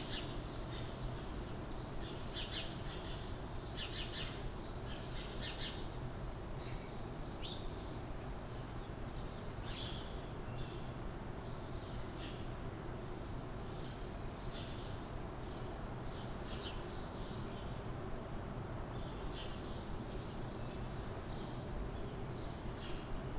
Scharnhorststraße, Berlin, Германия - 3rd Floor Living Room
Sunny morning of February 2020. 3rd Floor. Living room. Berlinale period of time.
Recorded on Zoom H5 built-in X/Y stereo microphone.
Deutschland